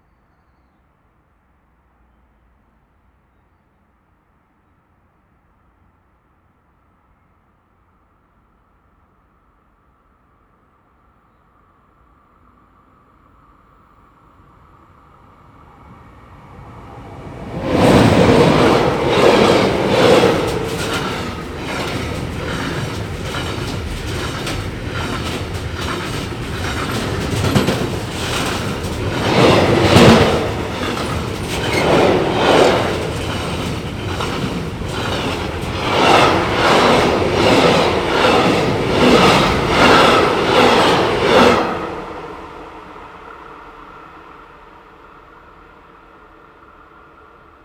Waldheim, Hannover, Deutschland - Hannover - Trains passing
Several trains passing, slight editing: shortened
[Hi-MD-recorder Sony MZ-NH900, Beyerdynamic MCE 82]